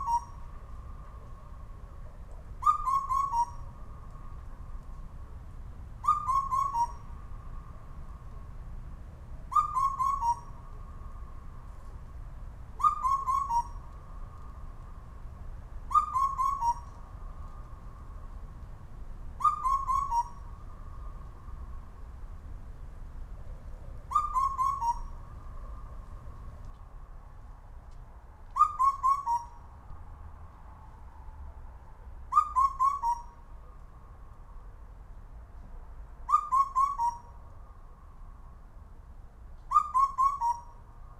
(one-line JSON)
{"title": "안마산에서 올빼미의 방송 Night Owl broadcast on Ahnma-san", "date": "2019-06-01", "description": "A rather strong, undeterred night bird (Owl?)...very close to a built up area in Chuncheon...broadcasting from the same general area every night for 1 week now (since Friday May 31st)...a nice echo can be heard returning from the surrounding apartment blocks...", "latitude": "37.85", "longitude": "127.75", "altitude": "127", "timezone": "GMT+1"}